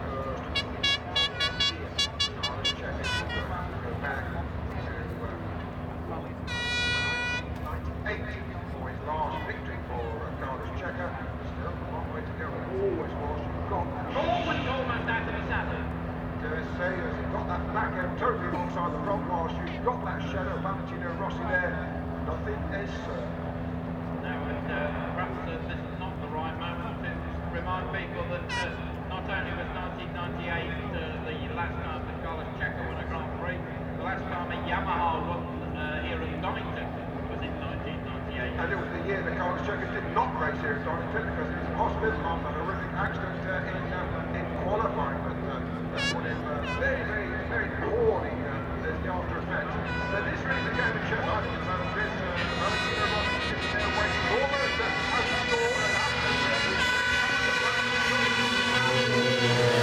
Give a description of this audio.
500 cc motorcycle race ... part one ... Starkeys ... Donington Park ... the race and all associated noise ... Sony ECM 959 one point stereo mic to Sony Minidick